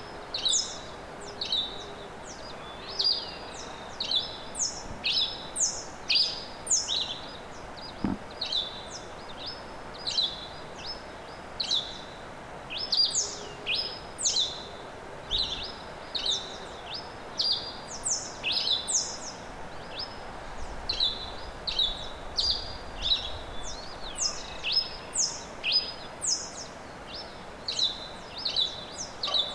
Purmamarca, Jujuy, Argentina - Buenos Días
Roland r26 xy+omni